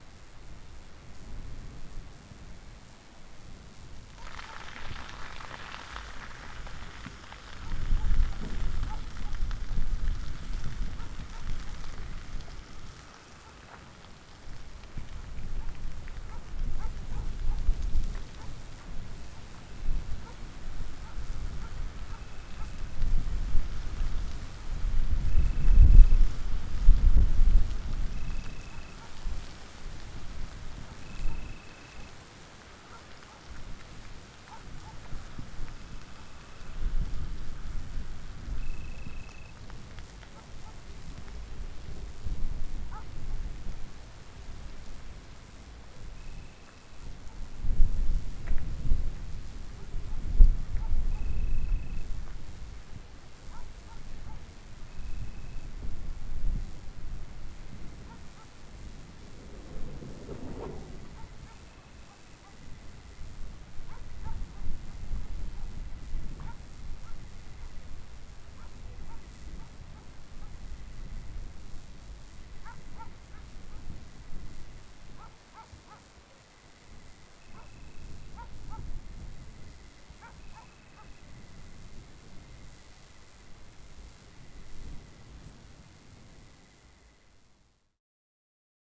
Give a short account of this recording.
Record by : Alexandros Hadjitimotheou